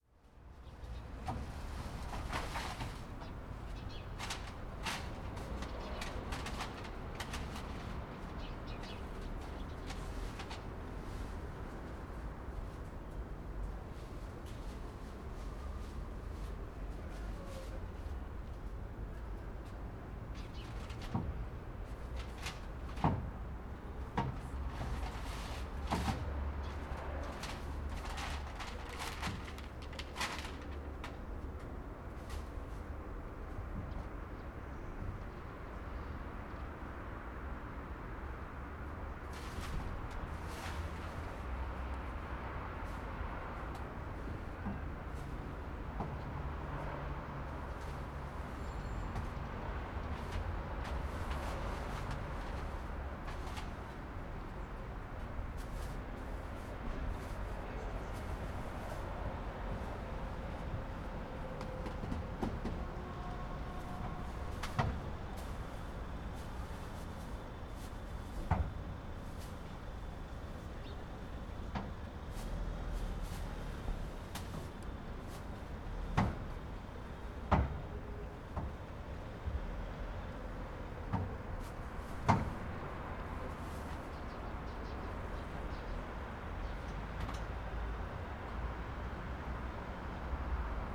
Poznan, Gen. Maczka housing estate - sorting garbage
a lady sorting her trash before putting it in the garbage cans. languid Sunday ambience around apartment buildings. high-heeled steps. friend approaches with rolling suitcase at the end of the recording.
Poznan, Poland, March 2014